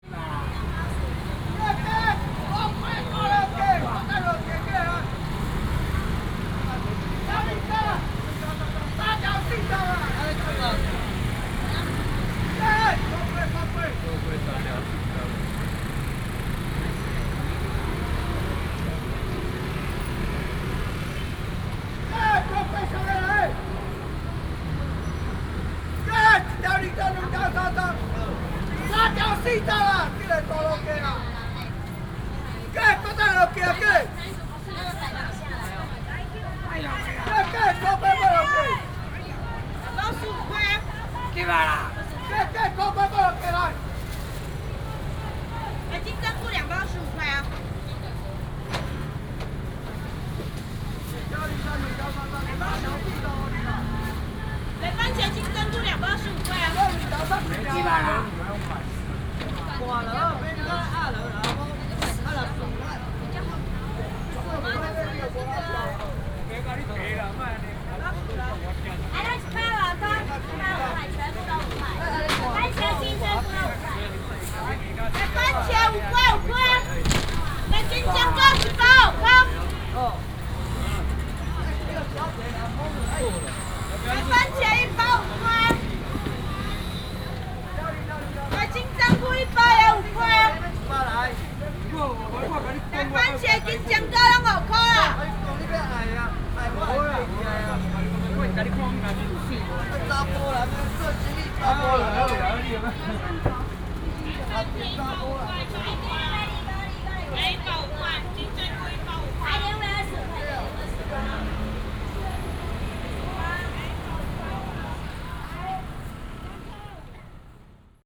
Ln., Xizang Rd., Wanhua Dist., Taipei City - The voices of vendors selling
in the traditional market, traffic sound, The voices of vendors selling
28 April 2017, 16:47